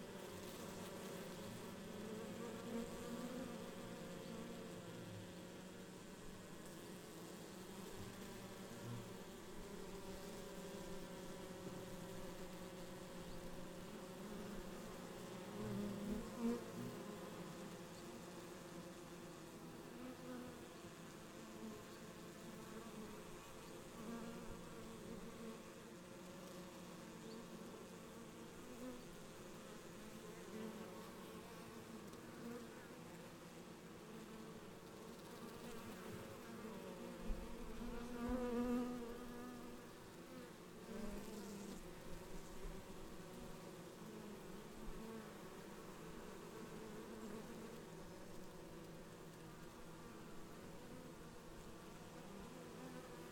Saint-André, La Hoguette, France - La Hoguette - Abbaye dr St-André en Gouffern
La Hoguette - Calvados
Abbaye de St-André en Gouffern
Les ruches
Normandie, France métropolitaine, France, 9 September 2020